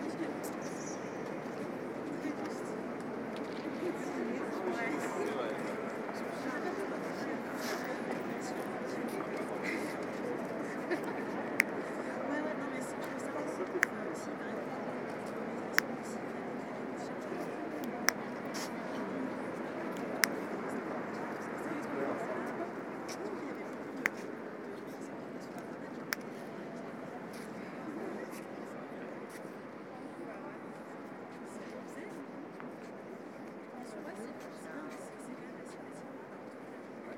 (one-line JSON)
{"title": "Inside Leviathan (Anish Kapoors installation for Monumenta 2011)", "date": "2011-05-16 18:01:00", "description": "Walking into the monster, people clapping and whistling to investigate (non)echoes.", "latitude": "48.87", "longitude": "2.31", "altitude": "53", "timezone": "Europe/Paris"}